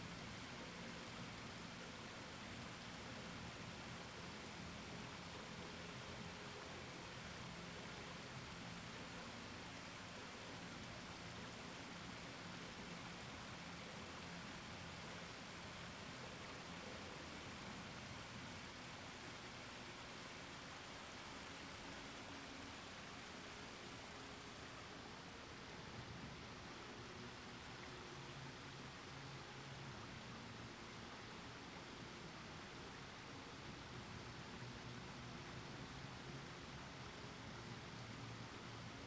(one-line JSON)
{"title": "Berkeley - campus, Strawberry creek 4.", "date": "2010-04-07 06:01:00", "description": "sound of a creek rushing down the hill mixed with a sound of music some students were using to practice for some ridiculous dance show", "latitude": "37.87", "longitude": "-122.26", "altitude": "88", "timezone": "US/Pacific"}